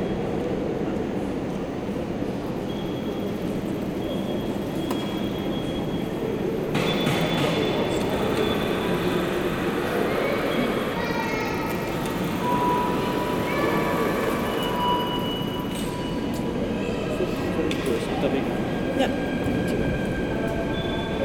Maastricht, Pays-Bas - Maastricht station
Inside the hall of the Maastricht station. People buying tickets on automatic machines, a child trying to play piano, announcement about a train going to Randwyck and above all, a very important reverberation.
Maastricht, Netherlands